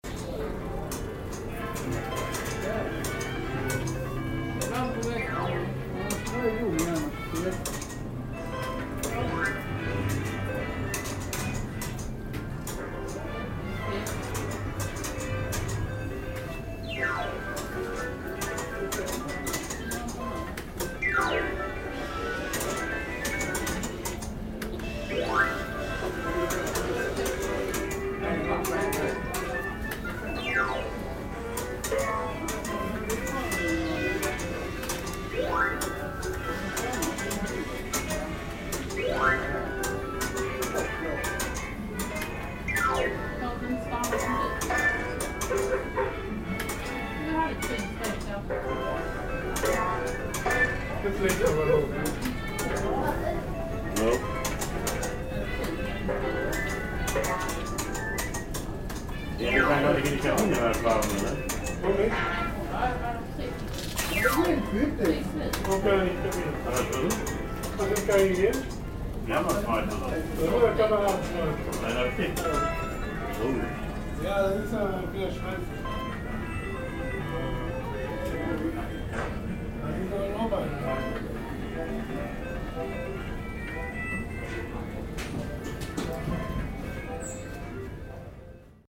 recorded on night ferry trelleborg - travemuende, august 10 to 11, 2008.